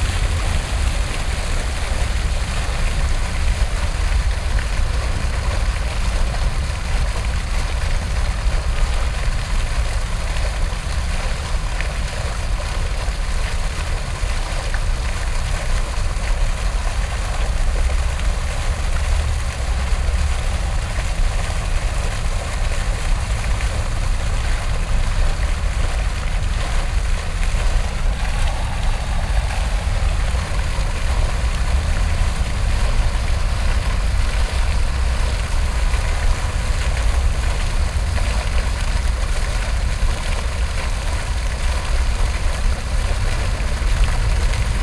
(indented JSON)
{
  "date": "2011-05-25 11:18:00",
  "description": "Brussels, Jardin du Palais des Académies - The fountain.",
  "latitude": "50.84",
  "longitude": "4.37",
  "timezone": "Europe/Brussels"
}